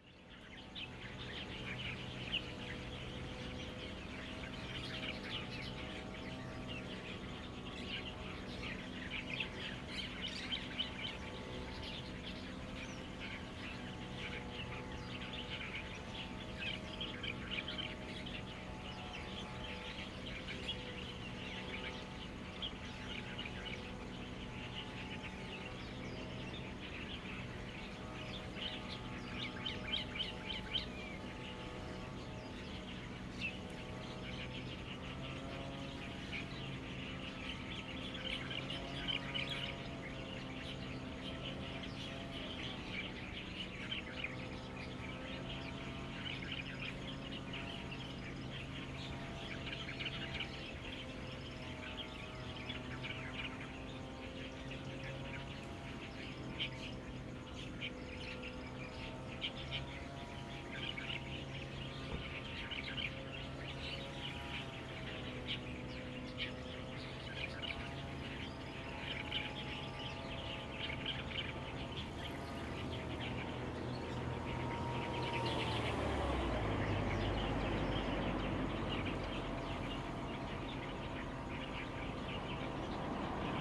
Sec., Shanxi Rd., Taitung City - Birdsong
Lawn mower, The weather is very hot, Birdsong
Zoom H2n MS +XY